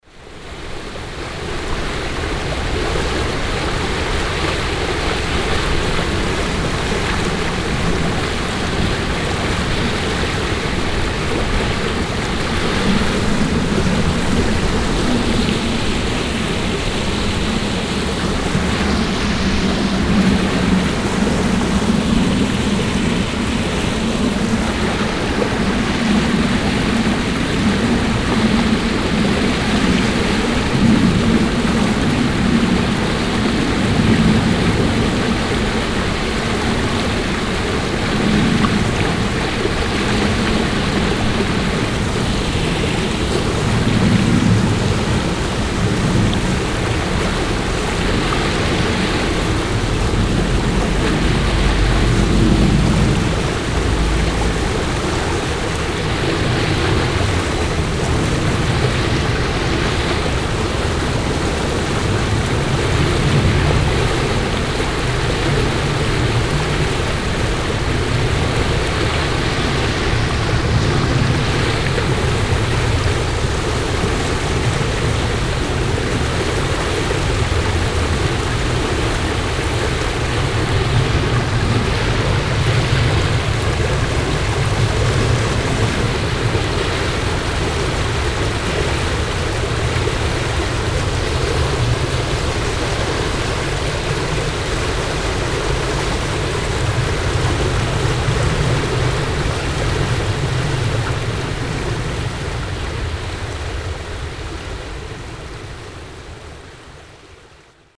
düsselzusammenfluss nahe talstrasse, aufnahmen ass dem frühjahr 2007
soundmap nrw:
social ambiences/ listen to the people - in & outdoor nearfield recordings
neanderthal, talstrasse, düssel